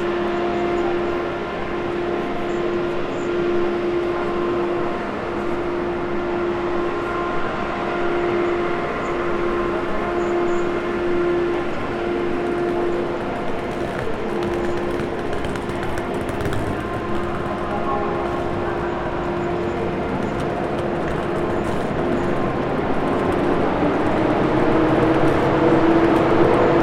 At track 9 again... the lockdown stopped four days ago... I hope it is audible that there are much more people and more also coming closer to the microphone. The station is more busy again. A beggar is asking what I am measuring.
Hessen, Deutschland